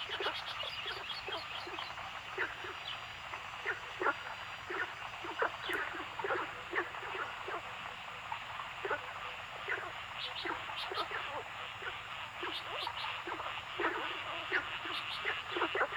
Zhonggua Rd., 桃米里 - Ecological pool
Bird sounds, Frog sounds
Zoom H2n MS+XY
3 May 2016, ~17:00